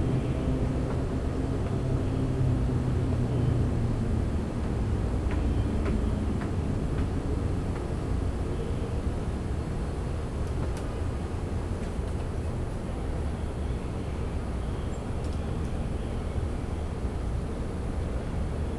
W York St, Savannah, GA, USA - In Front of a Basement
This was a recording of an outdoor sitting area outside of a basement in Savanna, GA. This was a (nearly) 200-year-old house, complete with multiple stories and a basement. I don't exactly remember, but I believe the house number was 311 (I could be mistaken). The owners of this house regularly rent it out to people staying temporarily, and I was here for a family event on two 98-degree days in spring. The specific place where this was captured was also filled with various pieces of large, noisy outdoor equipment, mostly AC vents. This recording captured the general soundscape of the area, which included some typical urban sounds, some scattered noises in the background, and, of course, the aforementioned fans. The door also opened multiple times (thankfully people were quiet!), and towards the end of the recording you can hear a couple of children and an adult trying to get my attention from the nearby overhead balcony.